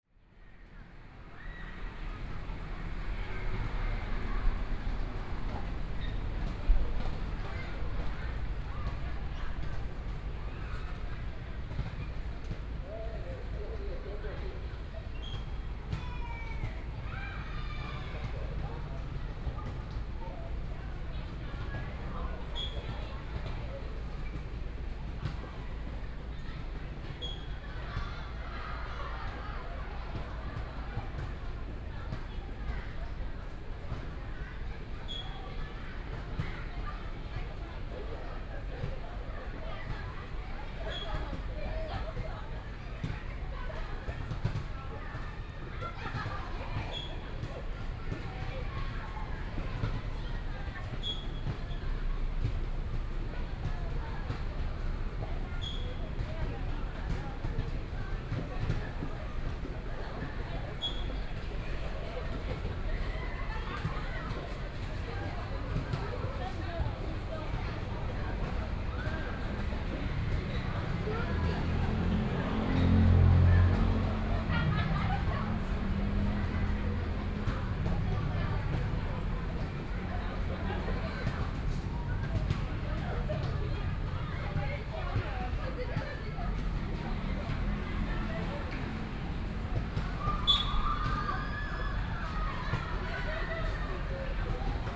Play sound, Zoom H4n+ Soundman OKM II